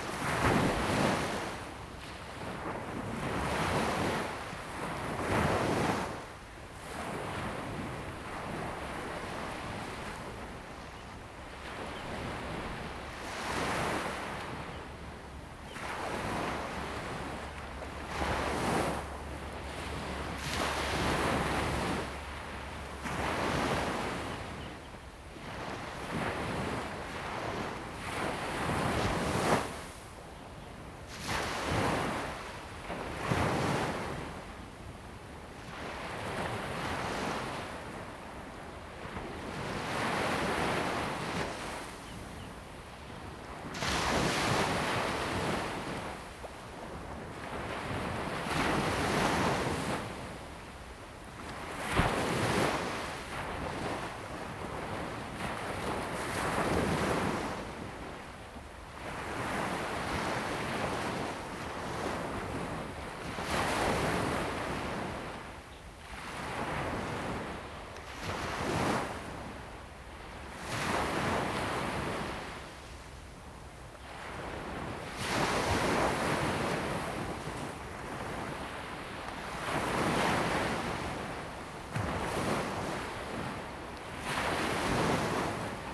At the beach, Sound of the waves
Zoom H2n MS +XY